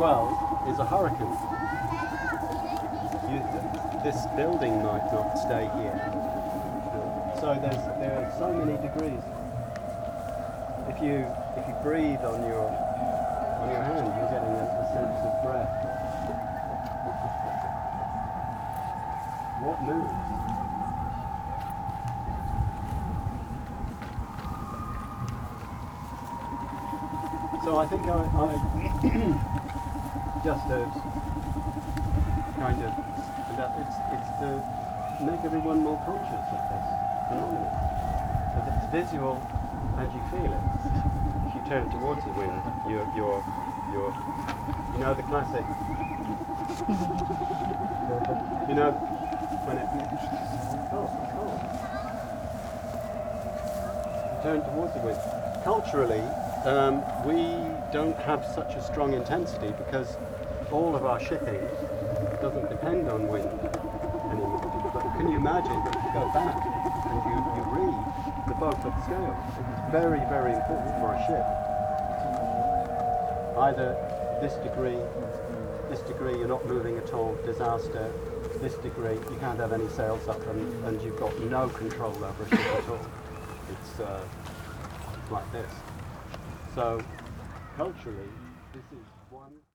Tempelhofer Feld, Berlin, Deutschland - Berlin Sonic Places: Max Eastley, aeolian harps
Max Eastley talks about his aeolian harps installation during Berlin Sonic Places. The project Klang Orte Berlin/Berlin Sonic Places was initiated by Peter Cusack in the frame of his Residency at The DAAD Artists-in-Berlin Program and explores our relationship with and the importance of sound in the urban context.